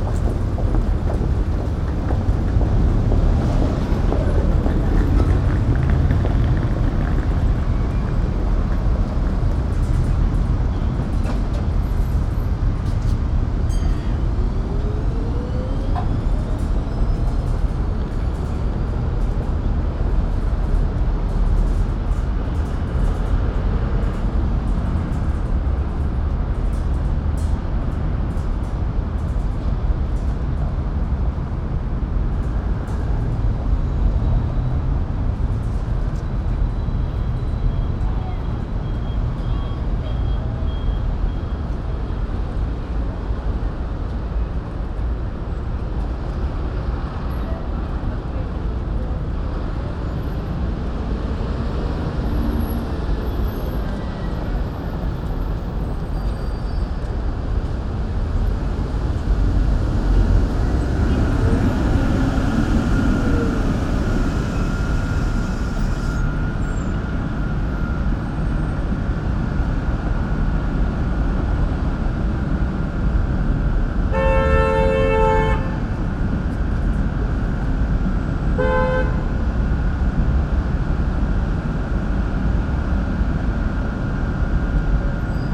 Brussels, Place Louise, Wind in the wires
At the tram stop, cold and windy, the wires aboves start moving one against another.
PCM-M10 internal microphones.